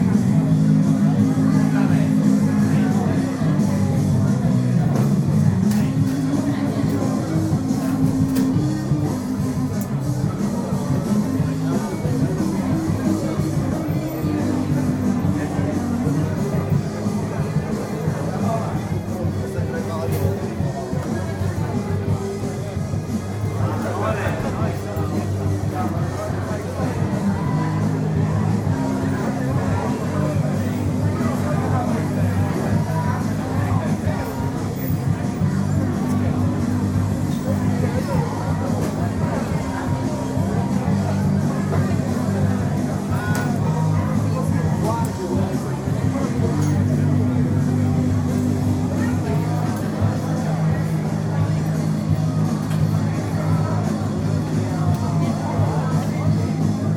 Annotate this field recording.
Drunks - Palermo, una sera qualunque edirolR-09HR (ROMANSOUND)